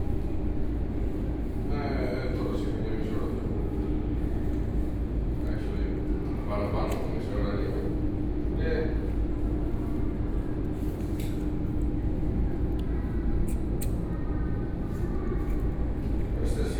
Suvarnabhumi International Airport, Bangkok - At the airport
walking at the airport
Samut Prakan, Thailand, May 2014